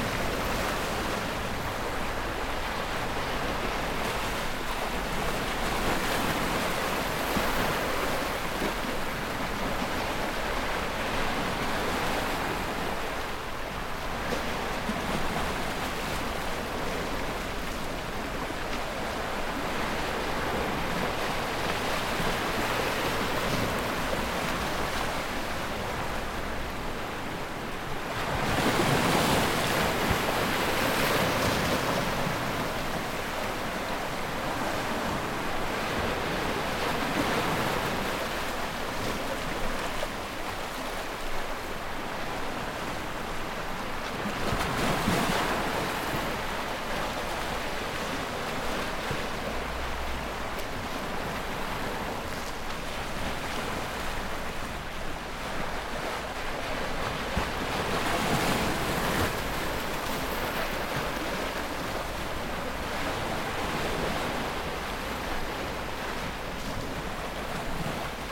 Wave Sound
Captation : ZOOM H6
Corse, France métropolitaine, France, 27 July 2022, 21:00